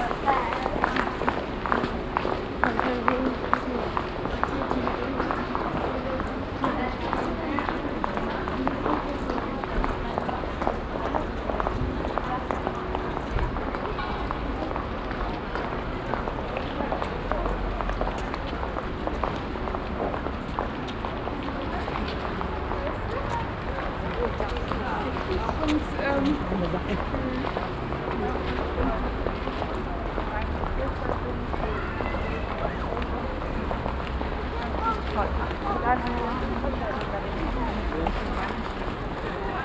hilden, mittelstrasse, fussgängerzone
diverse schritte auf steingefliesstem boden, stimmen, einkaufstüten, ein fahrrad, eine krähe, kleines stundenläuten der reformationskirche, mittags
soundmap nrw:
social ambiences/ listen to the people - in & outdoor nearfield recordings